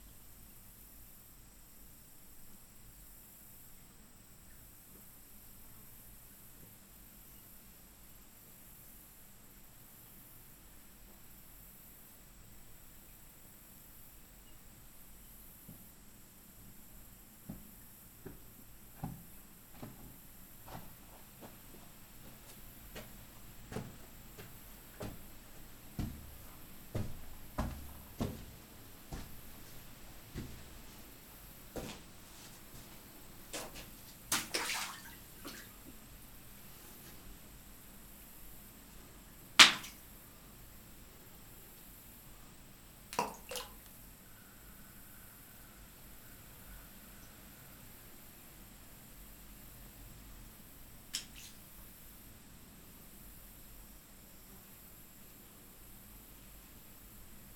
Faris Caves, Kanopolis, Kansas - Inside the Main Cave
Inside the center, main cave. A few small stones are tossed about. Some land in pools of water, some bounce off the inner walls. Air bubbles up through water early on. Birds, wind and cicadas can be faintly heard from outside. Stereo mics (Audiotalaia-Primo ECM 172), recorded via Olympus LS-10.
3 September 2017, 17:31